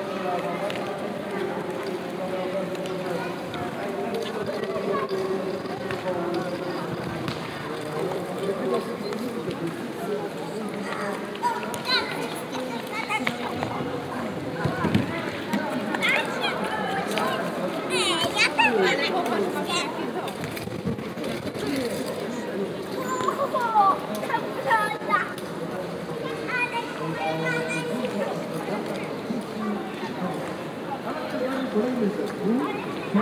Ale Róż, Kraków, Poland - (177 BI) Aleja Róż on Sunday
Binaural recording of a Sunday atmosphere of Aleja Róż promenade with a distant event heard in the background.
Recorded with Soundman OKM on Sony PCM D100.
województwo małopolskie, Polska, 2 July